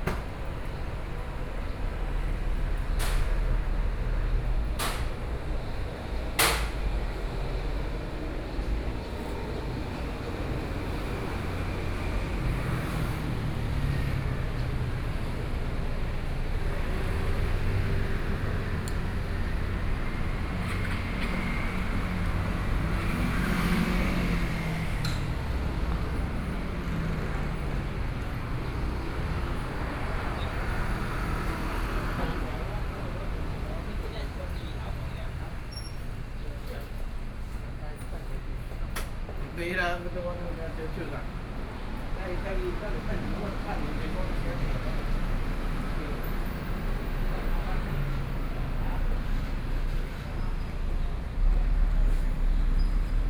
Gongzheng Rd., Luodong Township - walking on the Road
walking on the Road, Various shops voices, Traffic Sound